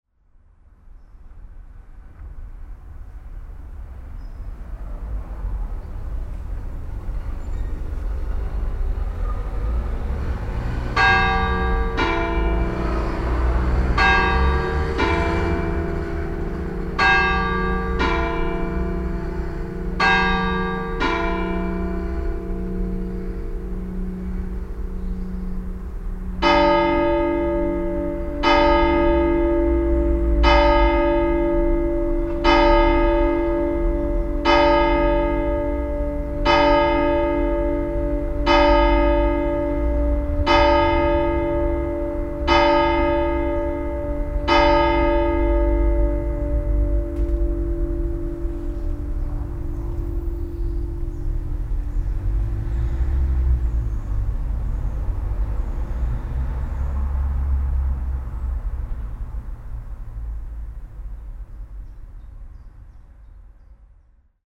Duclair, France - Duclair bells
Short recording of the Duclair bells ringing ten.